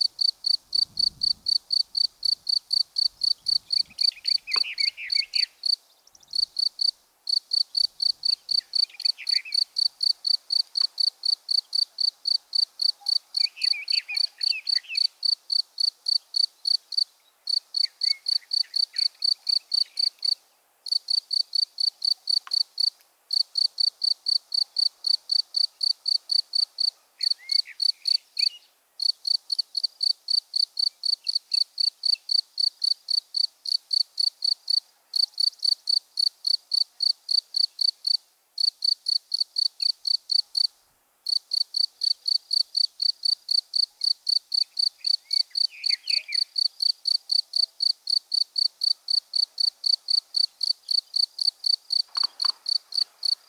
{"title": "Montdardier, France - Locusts", "date": "2016-05-02 13:45:00", "description": "In this shiny path, locusts are becoming completely crazy. They sing the same all day, the song of the sun.", "latitude": "43.94", "longitude": "3.54", "altitude": "713", "timezone": "Europe/Paris"}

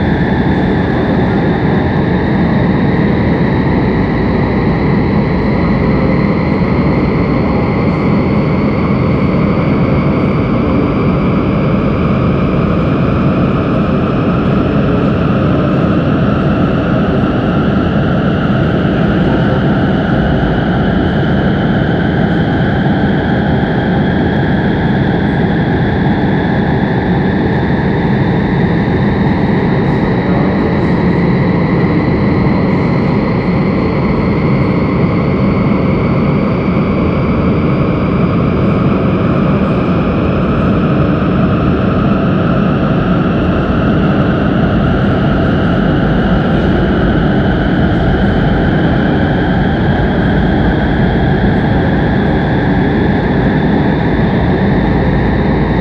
{"title": "Lippstadt, Deutschland - Lippstadt, old water tower, sound and light installation", "date": "2012-12-18 17:00:00", "description": "Inside the old water tower of Lippstadt. The sound of an installation by Jan Peter Sonntag, that is part of the light promenade Lippstadt. In the background voices of first visitors and the artist.\nsoundmap d - social ambiences, topographic field recordings and art spaces", "latitude": "51.66", "longitude": "8.36", "altitude": "85", "timezone": "Europe/Berlin"}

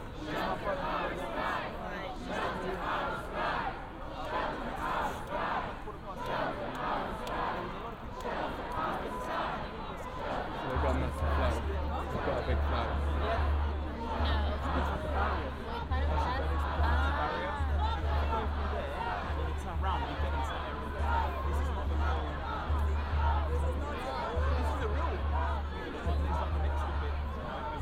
{"title": "Horse Guards Parade and Whitehall - Stand with Ukraine! London Anti-War Rally, Whitehall. 26 February 2022", "date": "2022-02-26 14:30:00", "description": "About twenty minutes at the 'Stand with Ukraine!' Anti-War Rally in London. Binaural recording made with Tascam DR-05, Roland CS-10EM binaural microphones/earphones.", "latitude": "51.50", "longitude": "-0.13", "altitude": "15", "timezone": "Europe/London"}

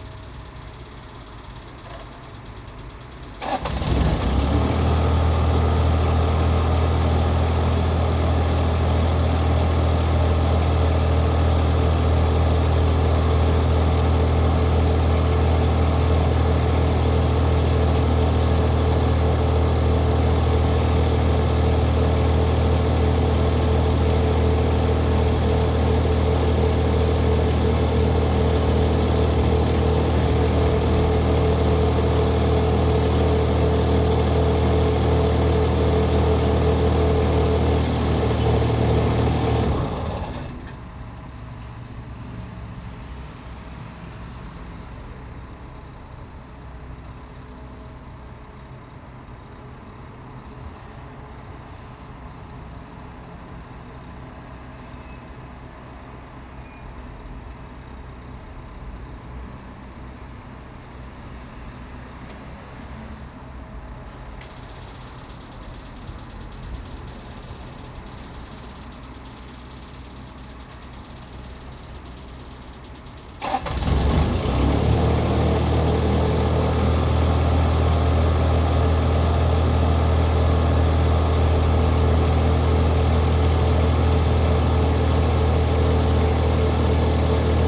{"title": "Brabanter Str., Köln, Deutschland - Refrigerated Lorry", "date": "2022-03-11 12:03:00", "description": "Cooling unit of a refrigerated lorry being unloaded. A daily nuisance here. Noise and diesel fumes for 45 minutes each time.\nRoland Wearpro mics and MOTU traveler MK3 audio interface.", "latitude": "50.94", "longitude": "6.94", "altitude": "56", "timezone": "Europe/Berlin"}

